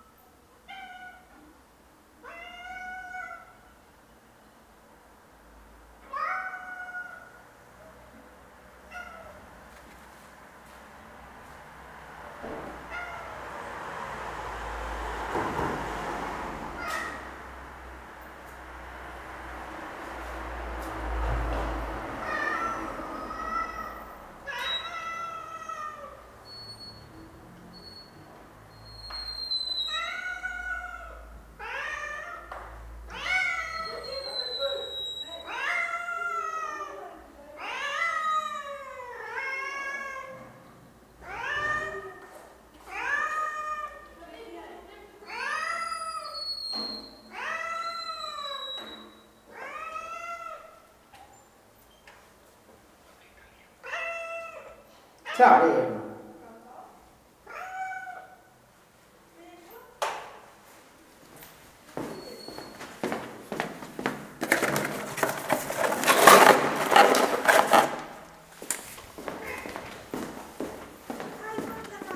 Pavia, Italy - Salem the Cat screams for food
Evening daily lament of the cat in the courtyard. Neigbors talk in the background.
20 October 2012, 20:00